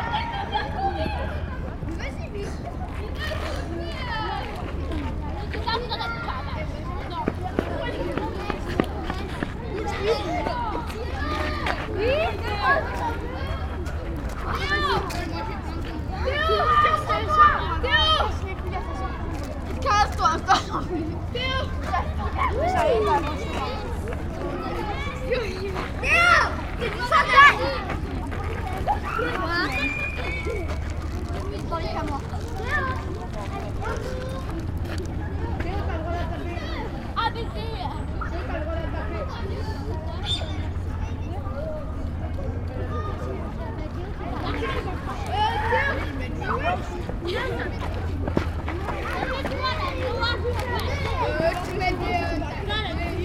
Recording from a bench in the park - contains mostly children's screams during playtime.
ORTF recording made with Sony D-100